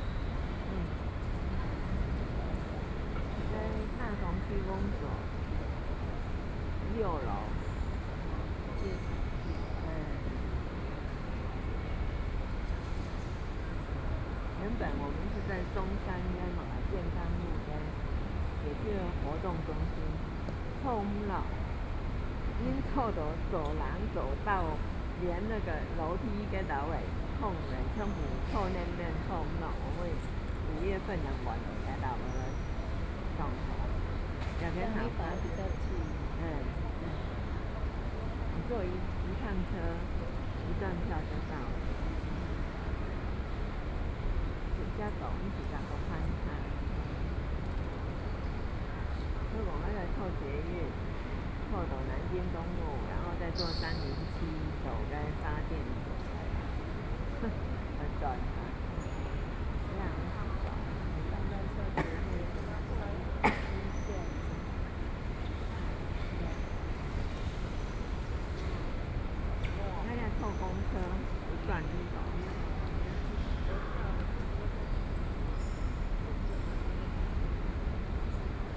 Station hall, High-speed rail train traveling through, Sony PCM D50 + Soundman OKM II
新竹縣 (Hsinchu County), 中華民國, 12 May, 18:39